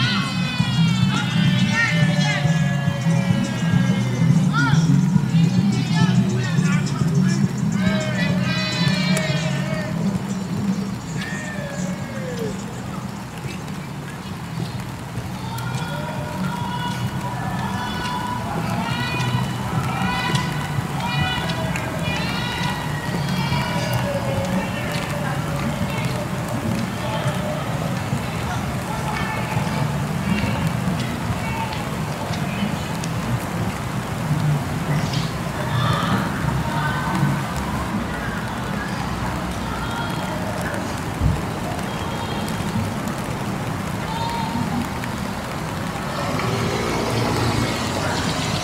W Fort St, Detroit, MI, USA - street
Michigan, United States